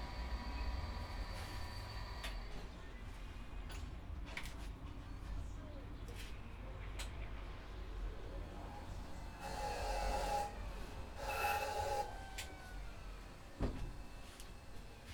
sounds of bench grinders and duplicators